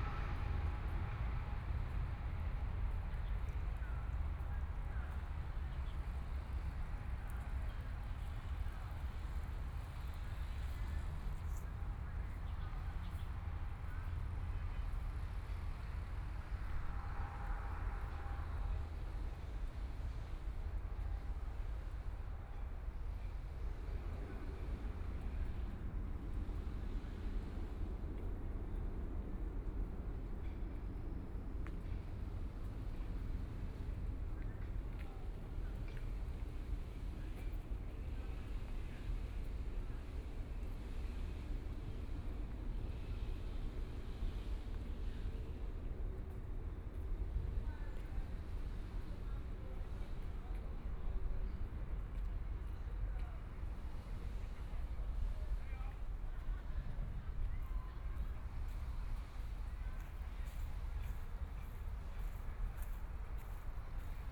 新生公園, Taipei EXPO Park - Walking through the park
Walking through the park, Traffic Sound, Aircraft flying through, Jogging game, Binaural recordings, ( Keep the volume slightly larger opening )Zoom H4n+ Soundman OKM II
Zhongshan District, Taipei City, Taiwan, 15 February 2014, 14:44